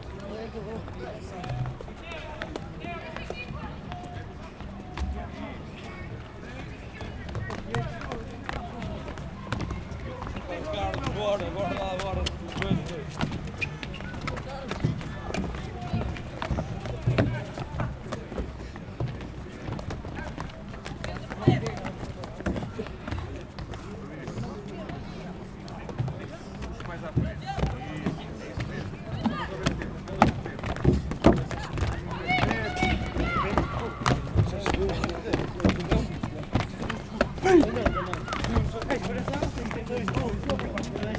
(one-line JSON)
{"title": "Sé Nova, Portugal - Santa Cruz Sport Field", "date": "2015-01-11 18:30:00", "description": "Santa Cruz Sport Field.\nZoom H4n.", "latitude": "40.21", "longitude": "-8.42", "altitude": "91", "timezone": "Europe/Lisbon"}